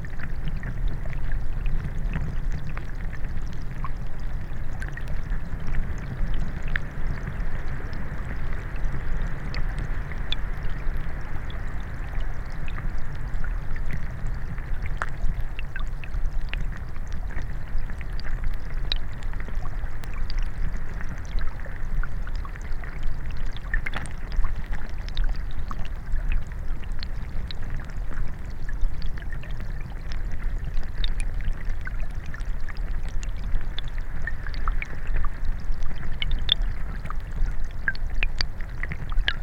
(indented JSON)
{
  "title": "Voverynė, Lithuania, inside the streamlet",
  "date": "2021-11-06 17:20:00",
  "description": "Underwater microphone in the streamlet",
  "latitude": "55.53",
  "longitude": "25.61",
  "altitude": "119",
  "timezone": "Europe/Vilnius"
}